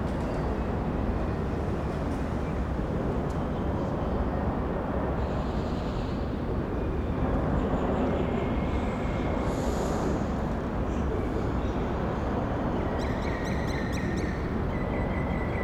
華江橋, Banqiao Dist., New Taipei City - Under the bridge
Under the bridge, Birds singing, Traffic Sound, Firecrackers
Zoom H4n+Rode NT4